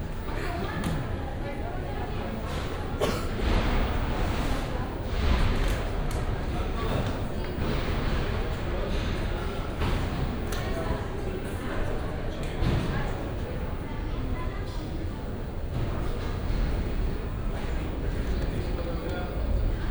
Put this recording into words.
(binaural) a few AB flights full with tourists arrived late at the same time at the Tegel airport. these were the last arrivals on this evening. plenty of tired and nervous people waiting for their luggage in the tight hall. each belt is fed with bags from at least two flights. scary clatter on the other side of the wall were the bags are put on the conveyor belts. as if the suitcases were shot out with a cannon on the belts.